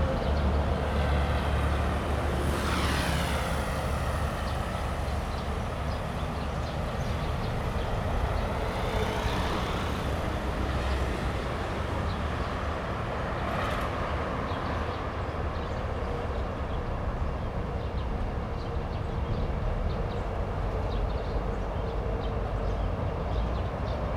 Zhuanyao Rd., Changhua City 磚磘里 - Traffic sound
next to the high-speed road, Traffic sound, The sound of birds
Zoom H2n MS+XY